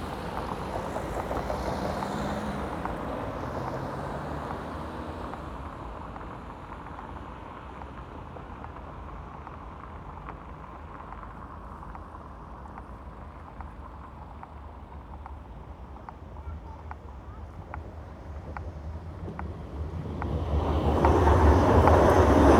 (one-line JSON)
{"title": "Trams, cars, traffic light clicks", "date": "2011-11-01 15:00:00", "description": "Junction where traffic slaps over the tram rails, trams roar past and traffic lights click in their daily rhythm.", "latitude": "52.56", "longitude": "13.57", "altitude": "60", "timezone": "Europe/Berlin"}